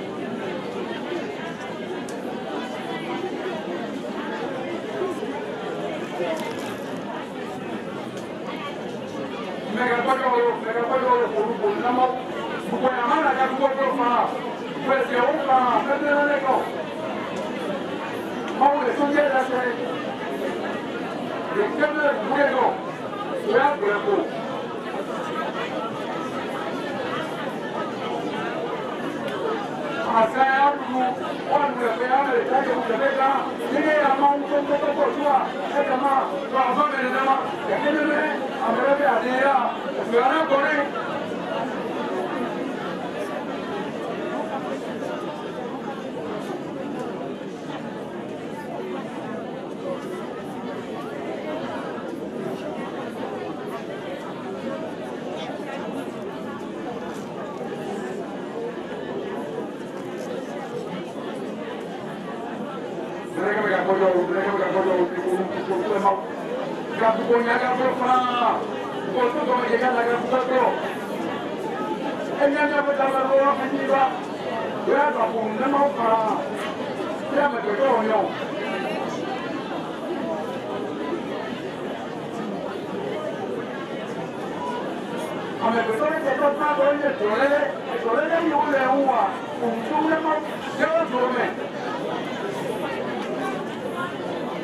Togbe Tawiah St, Ho, Ghana - church of ARS service: Free praying by the fire
church of ARS service: Free praying by the fire. This is my favourite part where all churchmembers start to share their personal wishes and questions with the Almighty. Surely He is the Greats Multitasker. The sound for me is mesmerizing.